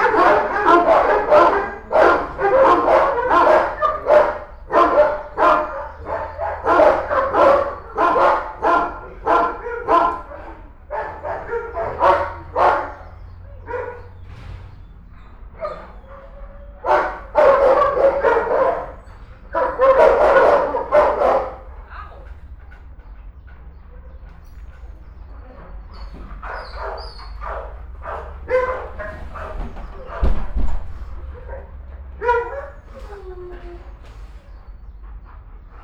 Im Albert Schweitzer Tierheim in der Hunde Abteilung. Die Klänge von Hundegebell als ein Tier vom "Gassi"- Gehen zurück kehrt.
Inside the Albert Schweitzer home for animals in the dog department. The sound of dogs barking as a dog returns from a stroll.
Projekt - Stadtklang//: Hörorte - topographic field recordings and social ambiences
Essen, Germany, 19 April 2014